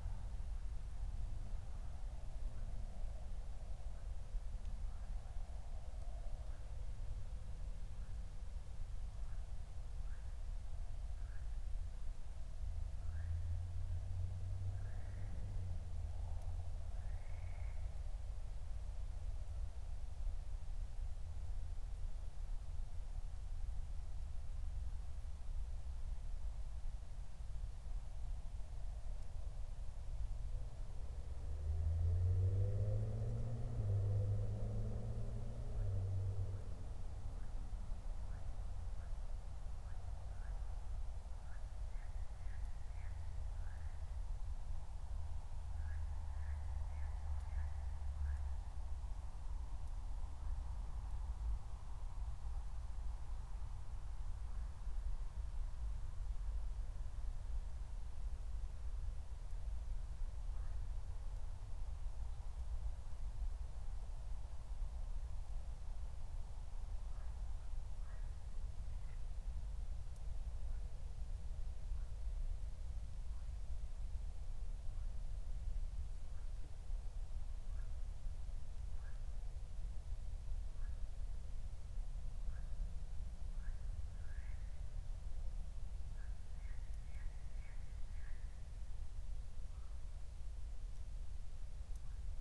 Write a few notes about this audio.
23:00 Berlin, Buch, Mittelbruch / Torfstich 1